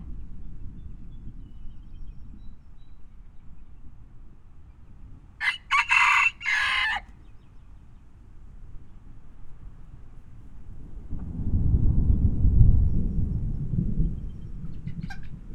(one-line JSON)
{"title": "Whitehill, Nova Scotia, CANADA", "date": "2010-07-18 15:40:00", "description": "A thunder storm moves in from the South East into rural Nova Scotia. Recorded on the North Side of a barn with free range chickens nearby.June 18th 2010. Recordist, Mark Brennan of Wild Earth Voices.\nWorld Listening Day", "latitude": "45.49", "longitude": "-62.76", "altitude": "146", "timezone": "America/Halifax"}